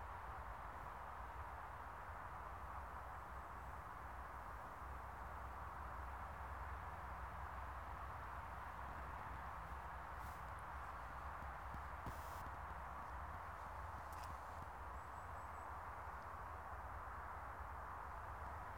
Pepperbox Hill, Whiteparish, UK - 017 A36 hum